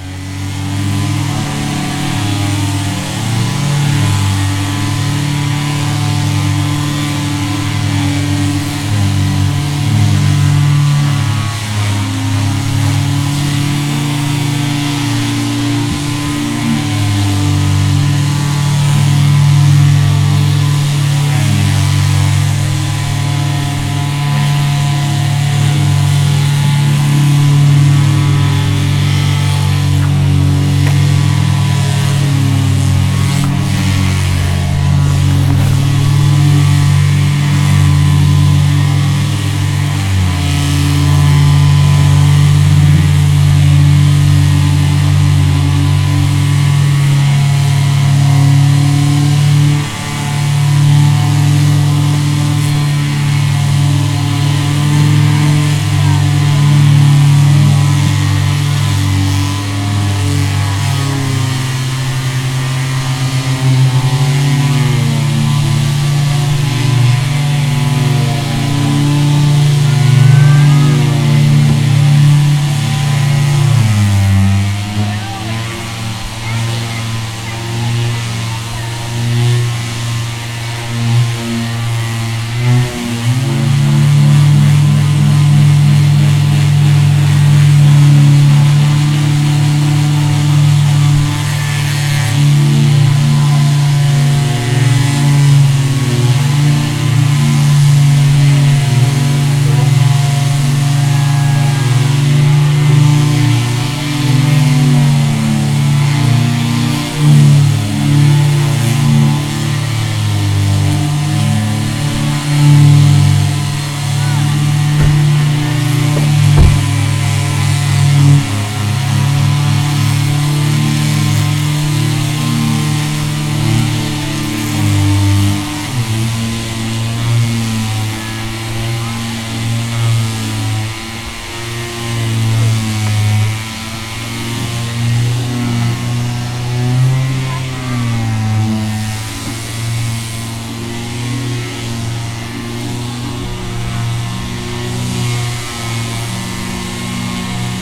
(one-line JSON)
{"title": "Kiekrz, at Kierskie lake, marina - boat sanding", "date": "2012-05-01 14:15:00", "description": "two man a kid sanding a small boat with electric grinders, the hull resonating, making wonderful, deep drones.", "latitude": "52.47", "longitude": "16.77", "altitude": "77", "timezone": "Europe/Warsaw"}